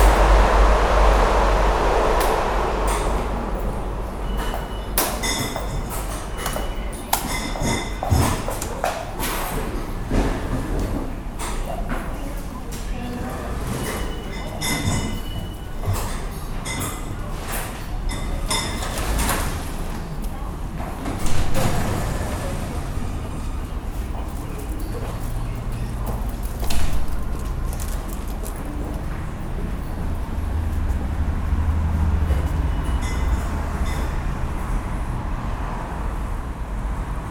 Maisons-Laffitte, France - Maisons-Laffitte station
Maisons-Laffite RER A station, early on the morning. People are going to work in Paris.